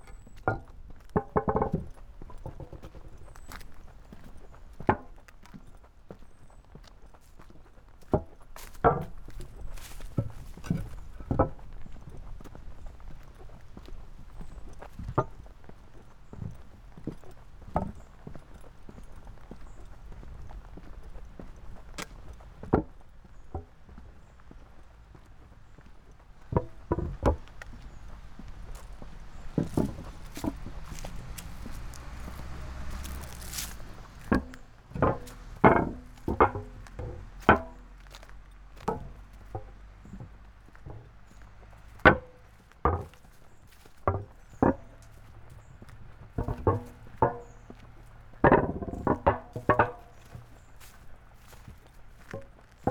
Tallinn, Kopli, Maleva, trackbed - trackbed walk #2
walk in the opposite direction
Tallinn, Estonia, July 2011